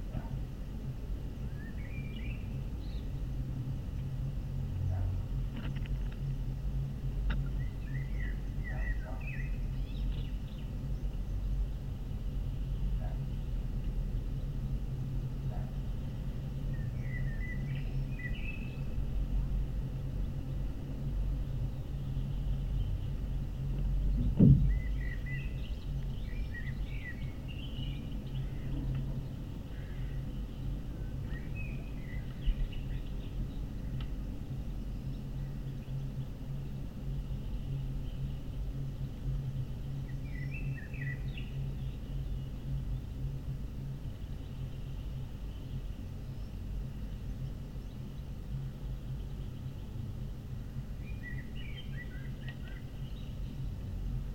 {"title": "Kelmė, Lithuania, abandoned house", "date": "2019-06-12 13:00:00", "description": "abandoned building where in 1944-1953 were tortured lithuanian resistents. contact microphones", "latitude": "55.63", "longitude": "22.93", "altitude": "124", "timezone": "Europe/Vilnius"}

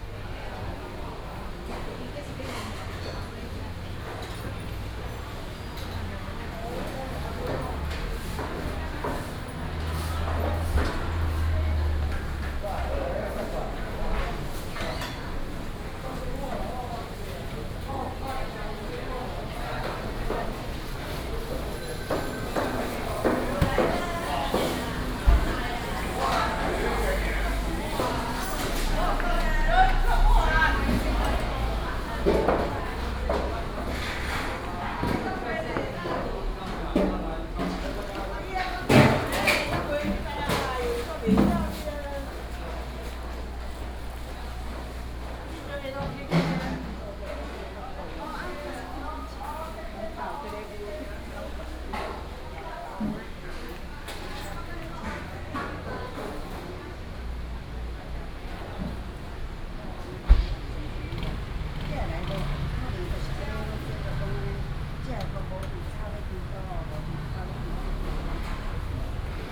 {"title": "大肚市場, Taichung City - in the Public retail market", "date": "2017-09-24 11:47:00", "description": "walking in the Public retail market, traffic sound, Being sorted out, Cleaning up cleaning, Binaural recordings, Sony PCM D100+ Soundman OKM II", "latitude": "24.15", "longitude": "120.54", "altitude": "17", "timezone": "Asia/Taipei"}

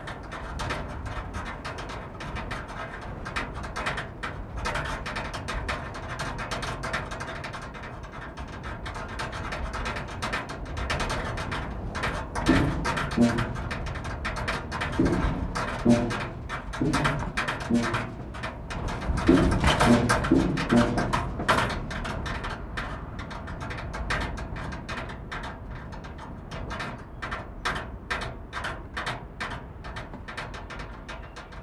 {"title": "Wind rocking metal discarded from a building site, Údolní, Praha, Czechia - Wind rocking a large piece of metal discarded from a building site", "date": "2022-04-06 11:03:00", "description": "The old brewery at Braník is spectacular industrial building fallen into disrepair when the business closed. Fortunately, it is currently being renovated and much of it is a building site.\nBuilding materials, pieces of scaffolding are lying around. This recording is a long piece of metal guttering being rocked percussively in the wind.", "latitude": "50.03", "longitude": "14.41", "altitude": "203", "timezone": "Europe/Prague"}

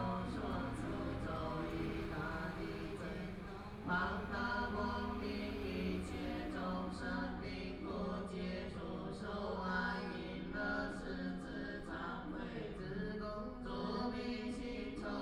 {"title": "Sanmin St., Hualien City - Funeral", "date": "2014-02-24 16:01:00", "description": "Funeral, Chanting voices, Traffic Sound\nBinaural recordings\nZoom H4n+ Soundman OKM II", "latitude": "23.98", "longitude": "121.61", "timezone": "Asia/Taipei"}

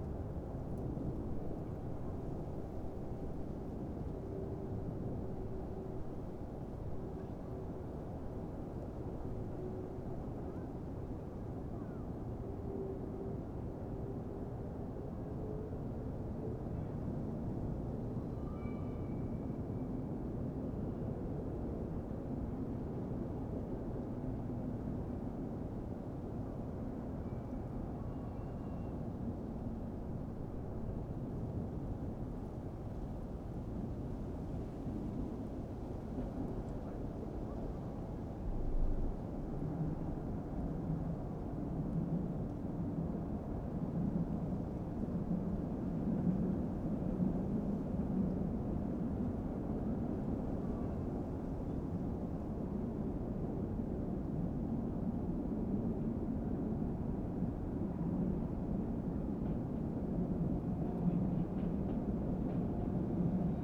{"title": "koeln, mediapark - near track system", "date": "2010-10-10 17:30:00", "description": "mediapark, near tracks, nice wastelands with 1000s of rabbits. soundcape of passing trains", "latitude": "50.95", "longitude": "6.95", "altitude": "54", "timezone": "Europe/Berlin"}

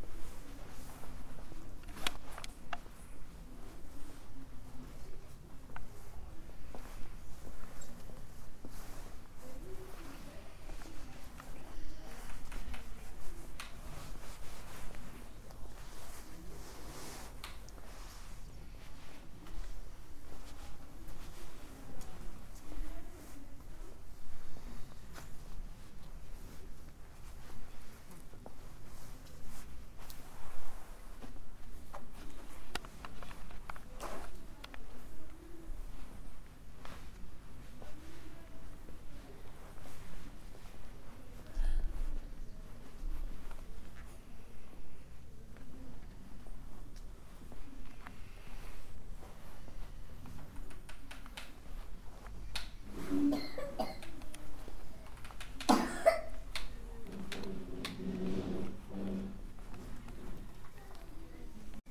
{"title": "Leforest, France - l'heure de la sieste", "date": "2016-02-03 14:53:00", "description": "C'est l'heure de la sieste chez les petite section de l'école Jean Rostand\nIt's nap time at Jean Rostand", "latitude": "50.43", "longitude": "3.06", "altitude": "22", "timezone": "Europe/Paris"}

